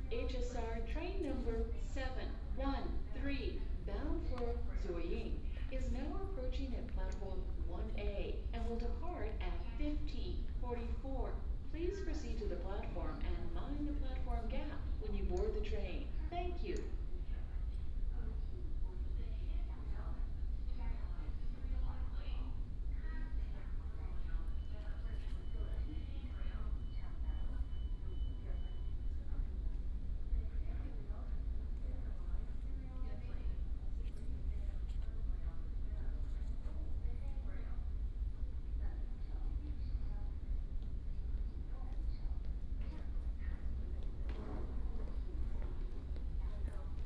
Banqiao Station, New Taipei City - In the high-speed rail station platform
In the high-speed rail station platform, Trains arrive and depart